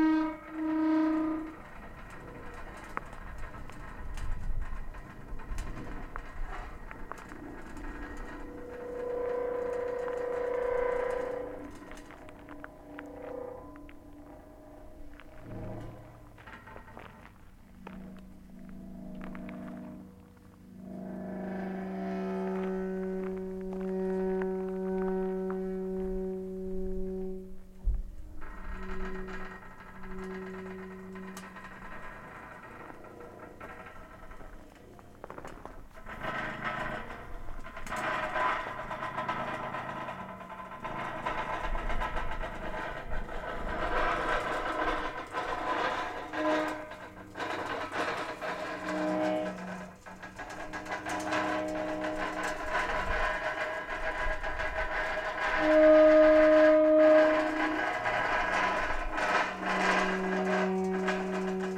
rusty gate to faro road, wind SW 12 km/h, ZOOM F!, XYH-6 cap
One of the countless cattle fence gates. Two wings, Heavy, rusty, noisy.
Provincia de Tierra del Fuego, Región de Magallanes y de la Antártica Chilena, Chile